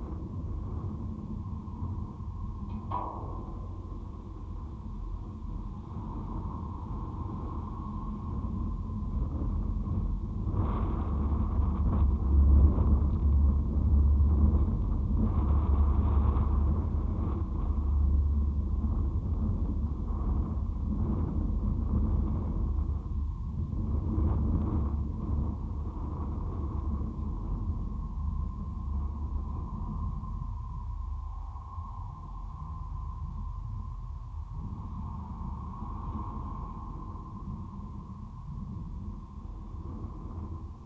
Mont-Saint-Guibert, Belgique - Architectural cables
This building is decorated with a strange external structure, made of cables. This is a recording of the wind onto the cables, with a contact microphone, and making nothing else. The wind produces strange drones.